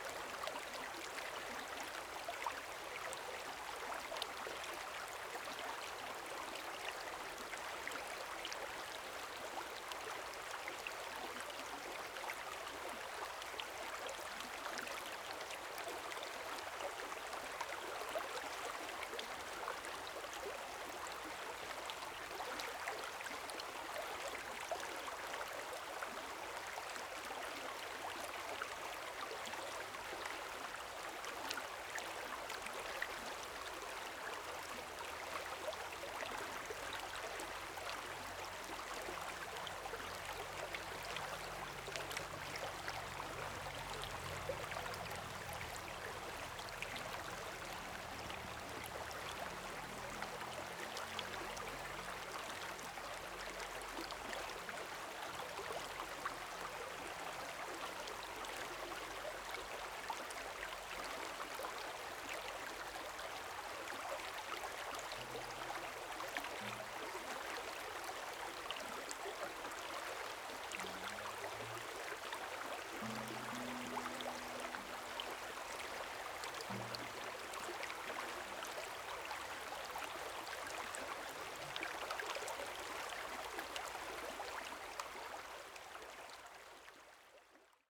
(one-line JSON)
{"title": "中路坑溪, 桃米里 Puli Township - Brook", "date": "2016-04-21 10:39:00", "description": "stream sound, Brook\nZoom H6 XY", "latitude": "23.94", "longitude": "120.92", "altitude": "490", "timezone": "Asia/Taipei"}